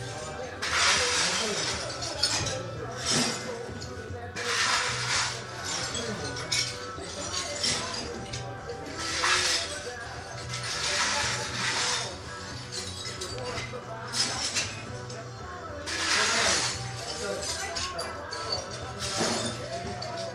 broken window, Pikk jalg, Tallinn
glass, broken window, coffee-shop
Tallinn, Estonia, 18 April 2011